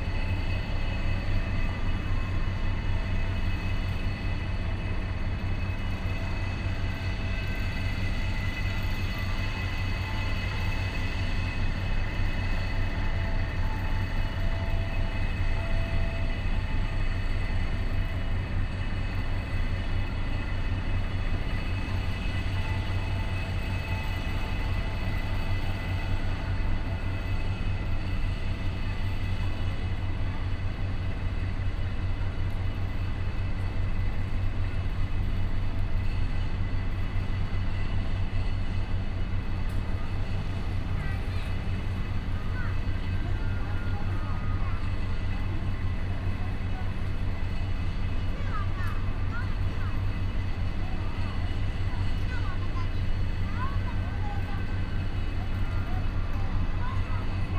Building Construction, Next to the construction site, birds, traffic sound
Bade District, Taoyuan City, Taiwan